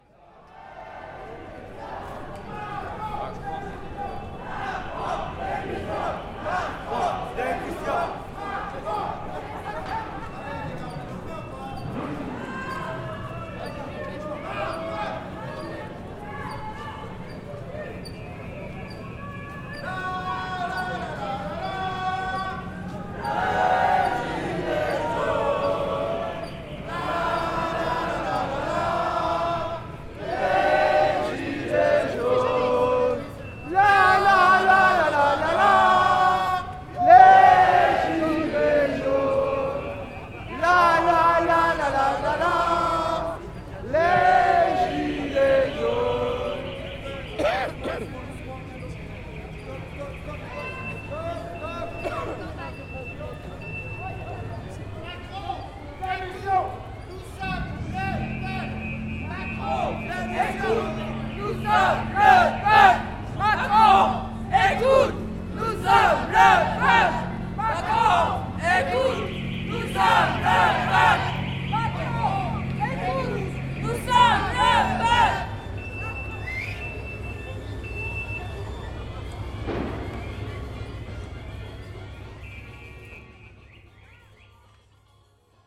St-Etienne (42000)
Manifestation des "Gilets Jaunes"
quelques slogans